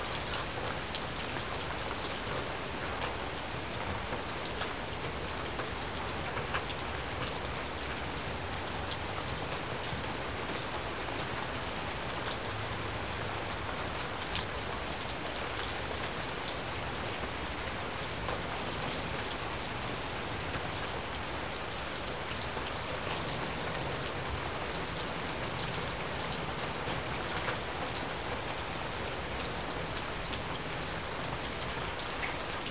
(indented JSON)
{
  "title": "Sherwood Forest - Idle Rain",
  "date": "1998-10-27 16:16:00",
  "description": "A common Northwest rainshower is caught out an open window. Water falling through the trees mixes with more splattering on the deck, and sudden rushes as water in the gutters overcomes the pine needles and washes down the downspouts. Wind gusts occasionally pick up the intensity. Meanwhile, daily commerce continues unabated in the background.\nMajor elements:\n* Rain falling on the trees, deck and ground\n* Distant traffic\n* Jet airplane\n* Train (2 miles west)\n* Edmonds-Kingston ferry horn (2 miles west)\n* Furnace vent",
  "latitude": "47.79",
  "longitude": "-122.37",
  "altitude": "106",
  "timezone": "America/Los_Angeles"
}